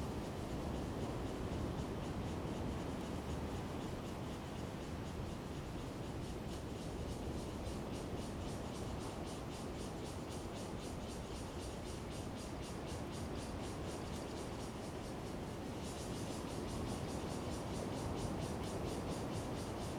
Donghe Township, Taitung County - In the woods
In the woods, Cicadas sound, Sound of the waves, Very hot weather
Zoom H2n MS+ XY